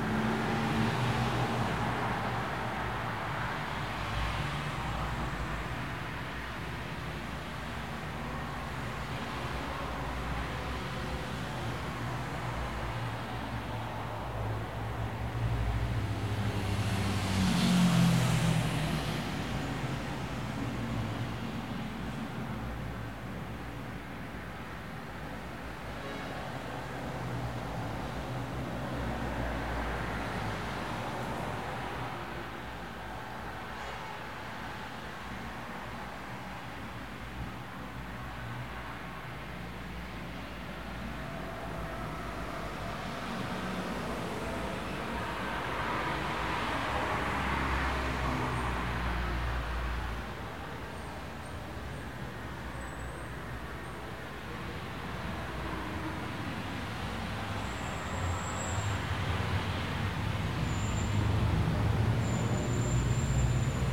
Al Quoz - Dubai - United Arab Emirates - Air Conditioned Bus Stop
Late afternoon traffic recorded inside an air-conditioned bus shelter.
Recorded using a Zoom H4
"Tracing The Chora" was a sound walk around the industrial zone of mid-Dubai.
Tracing The Chora
16 January 2016, 18:03